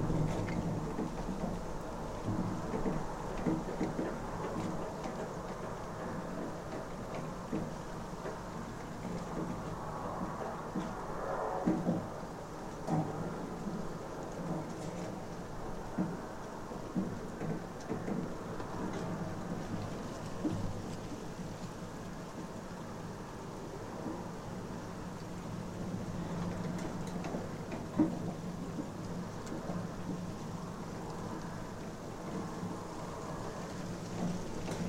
Vyžuonos, Lithuania, inside the rain pipe
Abandoned distillery. Microphones in the rain pipe.
Utenos rajono savivaldybė, Utenos apskritis, Lietuva, November 2020